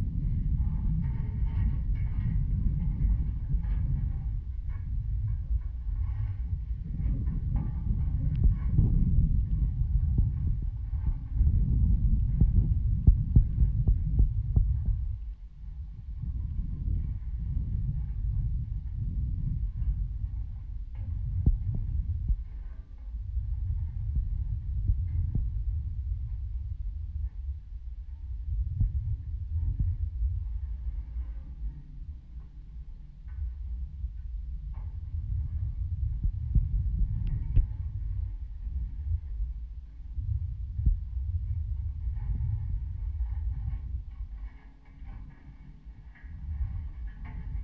metallic bridge railings, Lithuania
metallic railings of the bridge recorded with contact microphones
Vilkabrukiai, Lithuania, 19 August 2016, 12:10